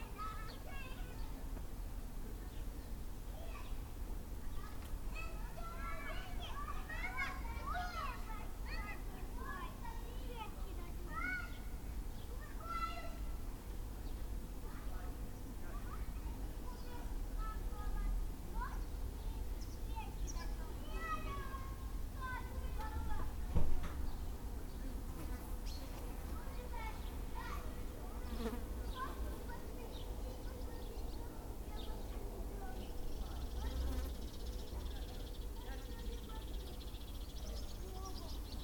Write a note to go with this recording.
Binaural recordings. I suggest to listen with headphones and to turn up the volume. This is the soundscape from Anton's House, a guy who hosted me for free in Medvezhyegorsk. Recordings made with a Tascam DR-05 / by Lorenzo Minneci